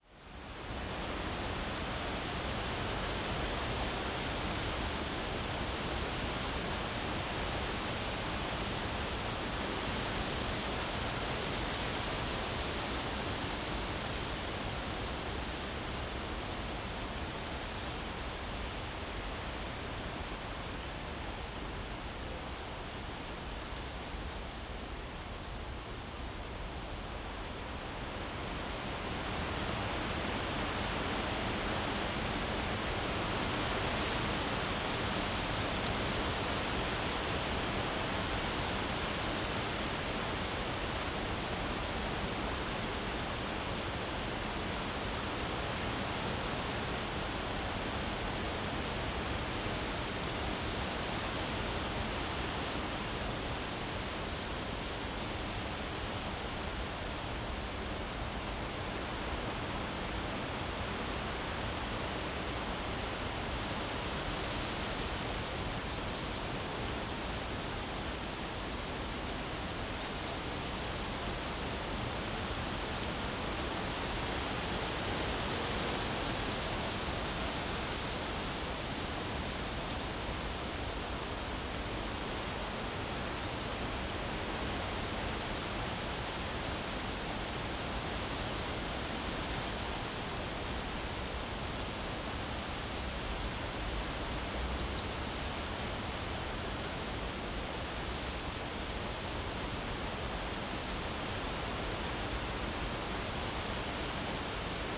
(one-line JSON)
{"title": "Tempelhofer Feld, Berlin, Deutschland - autumn morning wind", "date": "2012-10-14 10:00:00", "description": "bright autumn morning, breeze in poplars\n(SD702, AT BP4025)", "latitude": "52.48", "longitude": "13.40", "altitude": "42", "timezone": "Europe/Berlin"}